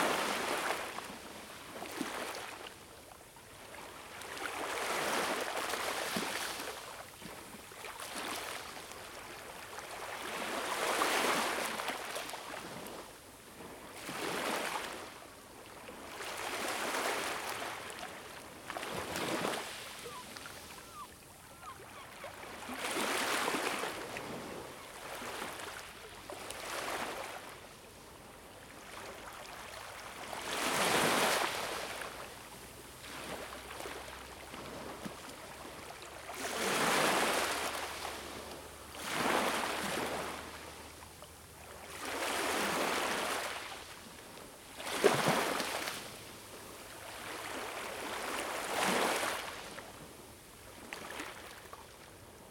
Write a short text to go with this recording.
The sound of the sea in the morning recorded next day with Zoom H2n